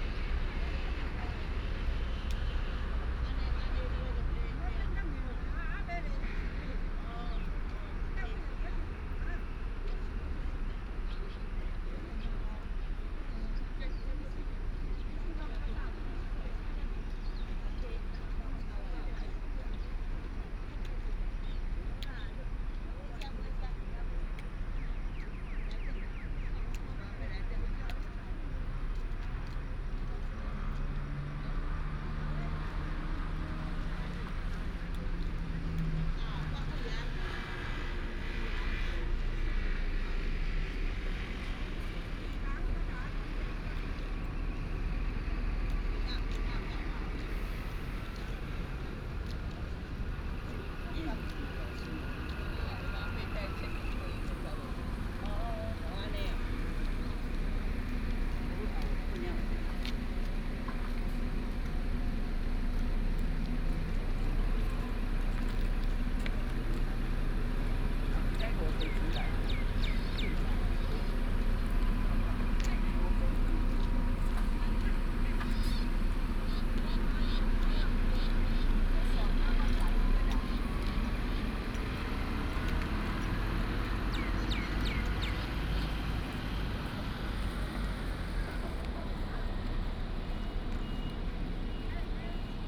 空軍五村, Hsinchu City - PARKING LOT
A group of older people are here to chat and exercise, Birds sound, traffic sound, PARKING LOT, The parking lot was formerly the residence of the soldier, Binaural recordings, Sony PCM D100+ Soundman OKM II